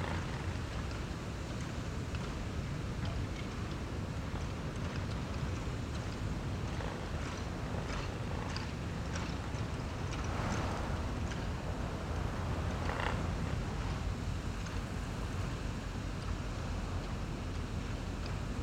Aglonas basilica, Latvia, wind
strong winds in Aglonas basilica place. mics hidden under the shrubs
Latgale, Latvija, 30 July 2020, ~16:00